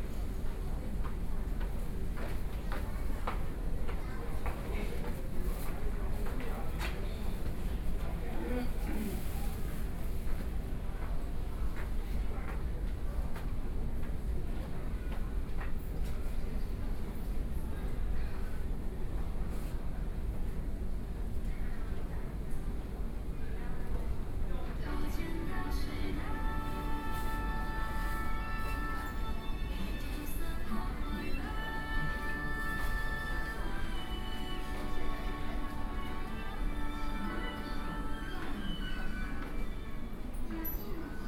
Minquan West Road - in the MRT Station